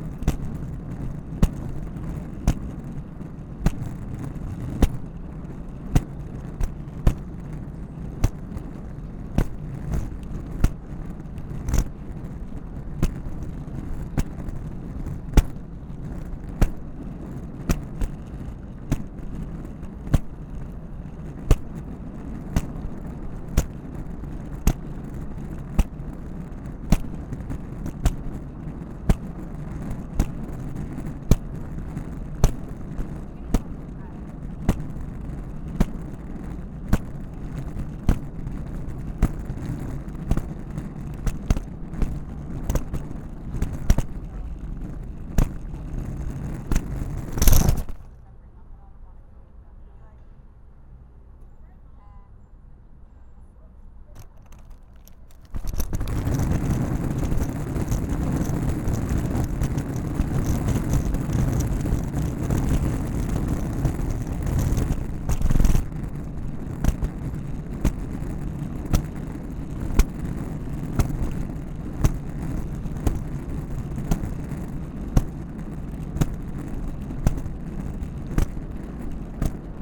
Commonwealth Avenue, Boston, MA, USA - USA Luggage Bag Drag 3
Recorded as part of the 'Put The Needle On The Record' project by Laurence Colbert in 2019.